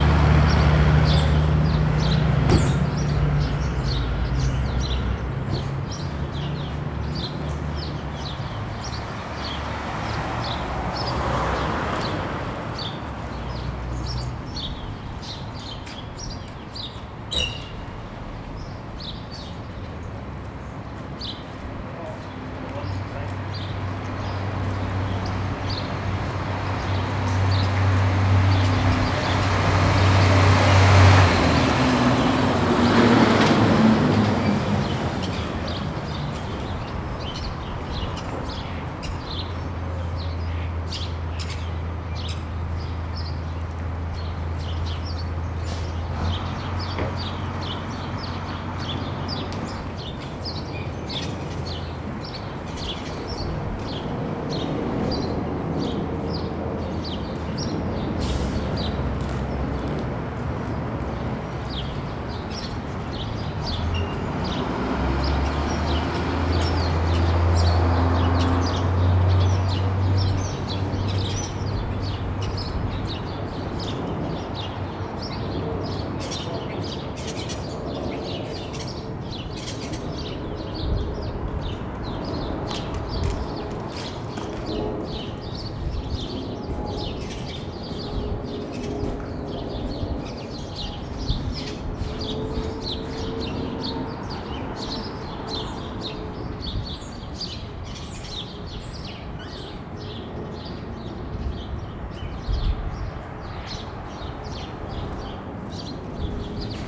{"title": "Montreal: 6800 block of hutchison - 6800 block of hutchison", "description": "equipment used: Zoom H2\nodd mix of birds and passing snow plow", "latitude": "45.53", "longitude": "-73.62", "altitude": "54", "timezone": "America/Montreal"}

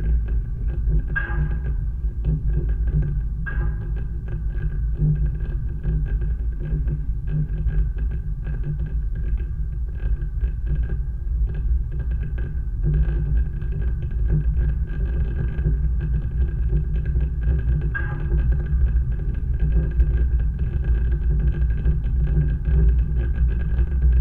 {"title": "Užpaliai, Lithuania, cell tower", "date": "2022-09-21 16:45:00", "description": "cell tower support fence. magnetic contact microphones", "latitude": "55.64", "longitude": "25.62", "altitude": "147", "timezone": "Europe/Vilnius"}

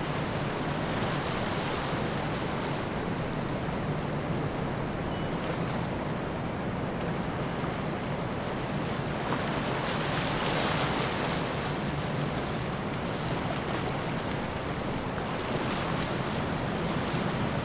{"title": "Niagara Pkwy, Niagara-on-the-Lake, ON, Canada - Tailrace of Sir Adam Beck II Generating Station", "date": "2020-11-16 14:30:00", "description": "This recording was made with an H2n placed on the railing of a platform overlooking the tailrace of Sir Adam Beck II (SAB II) Generating Station in Niagara Falls, Ontario. We hear the hum of SAB II, the spill of water returned from the station to the Lower Niagara River, and the cry of gulls. SAB I and II have a combined generating capacity of about 2,123 megawatts – enough to power more than one million homes each year. The Niagara River Corridor is an Important Bird and Bird Diversity Area (IBA) frequented by at least 18 gull species. With thanks to Ontario Power Generation.", "latitude": "43.15", "longitude": "-79.04", "altitude": "79", "timezone": "America/New_York"}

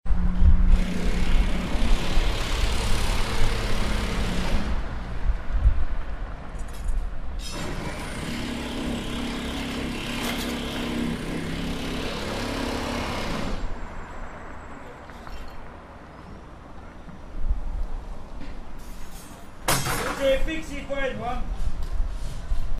{"title": "Nürnberg, Dientzenhofers., kruzifix i fa jezz hoam", "description": "construction site with a steelworker doing a mistake and getting angry.", "latitude": "49.46", "longitude": "11.13", "altitude": "316", "timezone": "GMT+1"}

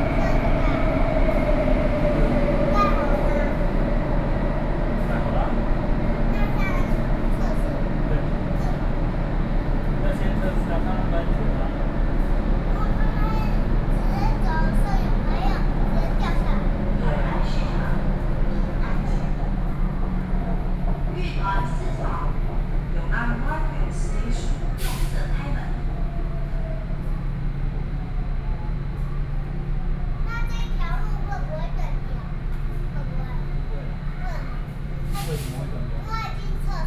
{"title": "Yong'an Market Station, New Taipei City - inside the Trains", "date": "2012-09-29 14:21:00", "description": "inside the MRT Trains, Sony PCM D50 + Soundman OKM II", "latitude": "25.00", "longitude": "121.51", "altitude": "23", "timezone": "Asia/Taipei"}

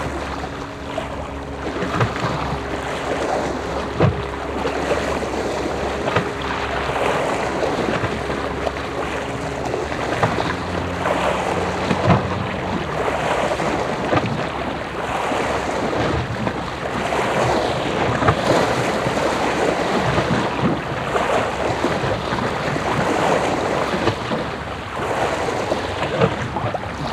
{"title": "Sveio, Norwegen - Norway, Holsvik, rock crevice", "date": "2012-07-19 16:00:00", "description": "On the rocks at the ford water. The sound of the water lapping in and out a deep rock crevice. A motor boat starting increases the waves.\ninternational sound scapes - topographic field recordings and social ambiences", "latitude": "59.70", "longitude": "5.54", "timezone": "Europe/Oslo"}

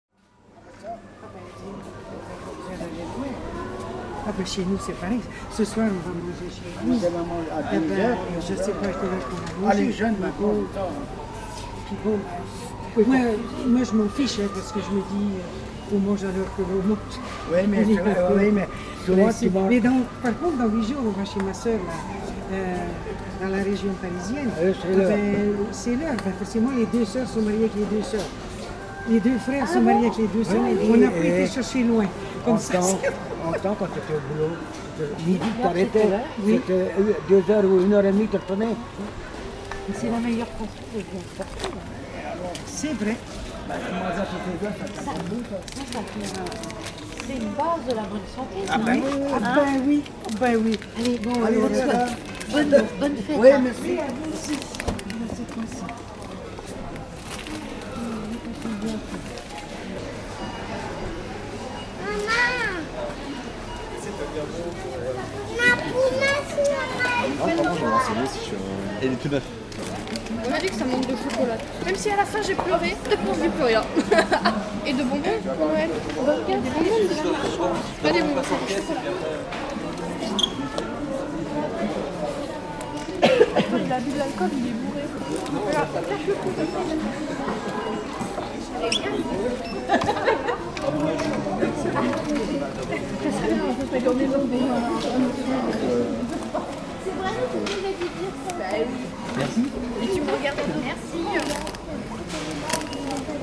{"title": "Maintenon, France - Supermarket", "date": "2016-12-24 16:00:00", "description": "Recording the clients in the supermarket just before Christmas.", "latitude": "48.58", "longitude": "1.57", "altitude": "130", "timezone": "GMT+1"}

